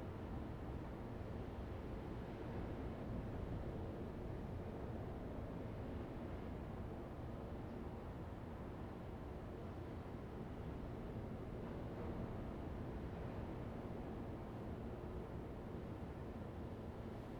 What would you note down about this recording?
In a tunnel, inside the cave, Zoom H2n MS+XY